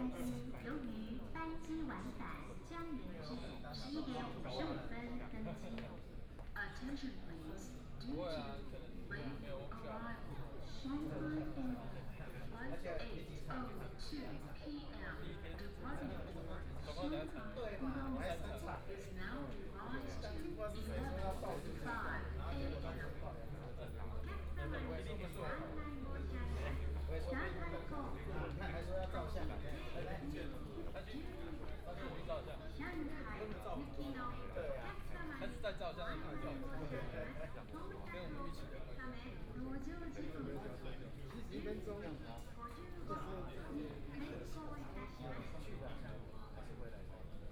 In the airport departure lounge, Waiting for a flight passengers, Zoom H6 + Soundman OKM II